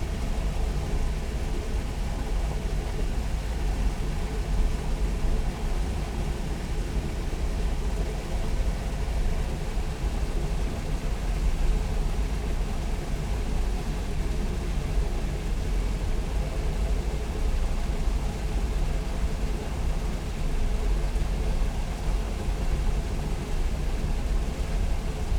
Berlin Buch, former trickle fields / Rieselfelder - water station, overflow
same spot, recording with normal pressure mics for contrast
(Sony PCM D50, Primo EM272)
Deutschland